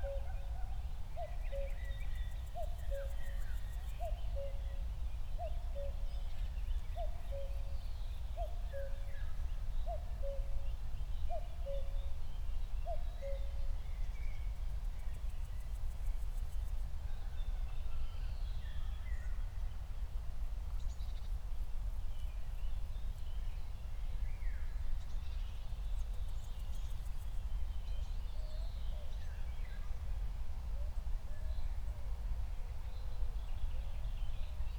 Berlin, Buch, Mittelbruch / Torfstich - wetland, nature reserve
10:00 Berlin, Buch, Mittelbruch / Torfstich 1
19 June 2020, 10:00